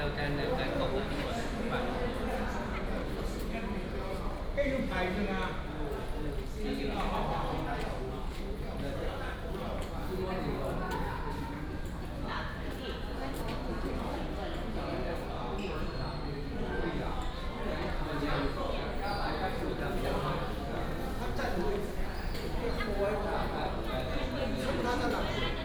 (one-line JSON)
{"title": "新竹客運苗栗總站, Miaoli City - Station hall", "date": "2017-01-18 09:42:00", "description": "Station hall, Many old people", "latitude": "24.57", "longitude": "120.82", "altitude": "59", "timezone": "GMT+1"}